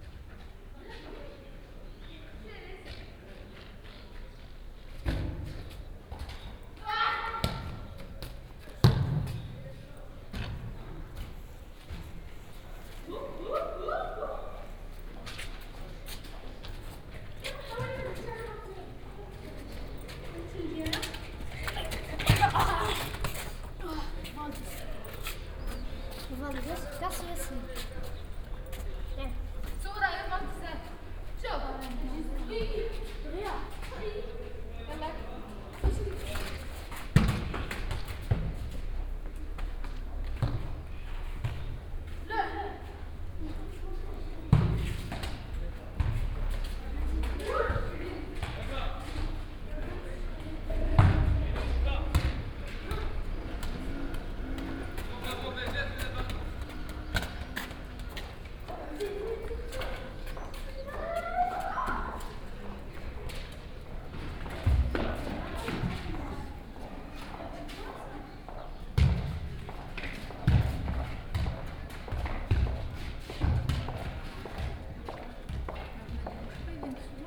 Arset Ben Chebi, Marrakesch, Marokko - kids playing in echoing street
kids play soccer in a narrow street, with a flattering echo
(Sony D50, OKM2)